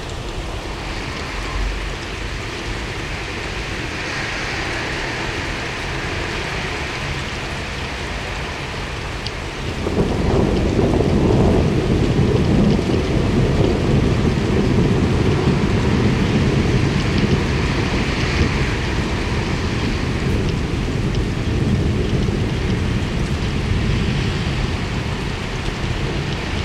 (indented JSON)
{
  "title": "Kapelstraat, Rotterdam, Netherlands - Thunderstorm",
  "date": "2021-05-09 20:00:00",
  "description": "Thunderstorm and rain. Recorded with a Dodotronic parabolic stereo.",
  "latitude": "51.91",
  "longitude": "4.46",
  "altitude": "7",
  "timezone": "Europe/Amsterdam"
}